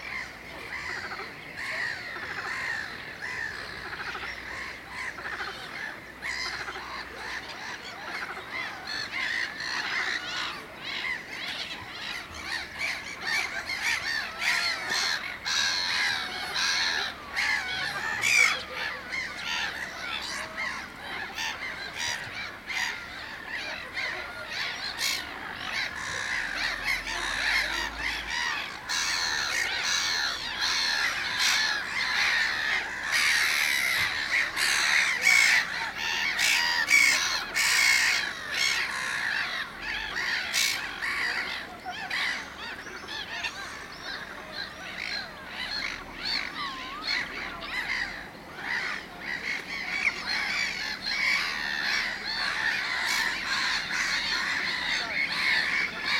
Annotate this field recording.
A quarrel of black-headed gulls (or something like that) among themselves and the croaking of frogs in a pond near the territory of the Suzdal Kremlin. Also, the voices of tourists passing by are heard on the recording. Recorded with Zoom H2n in 2ch surround mode